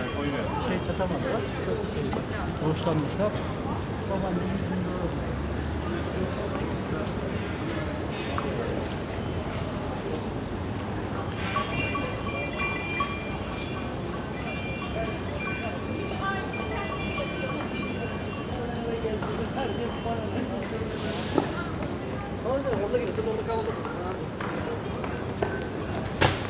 man selling bells, Istanbul
street salesman selling bells on a pedestrian street
Nuru Osmaniye Cd, Mollafenari, Turkey, 10 February 2010